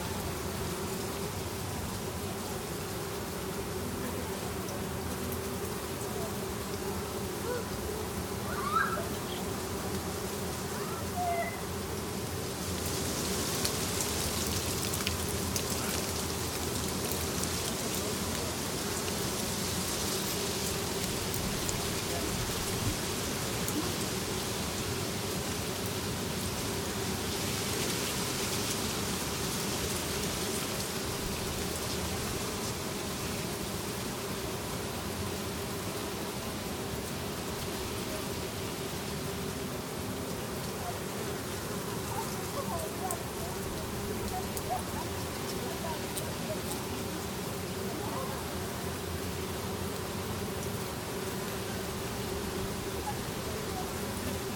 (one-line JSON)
{"title": "Templo Sur, Monte Albán, Oax., Mexico - Bees in White Flowering Tree", "date": "2016-04-11 14:45:00", "description": "Recorded with a pair of DPA4060s and a Marantz PMD661", "latitude": "17.04", "longitude": "-96.77", "altitude": "1925", "timezone": "America/Mexico_City"}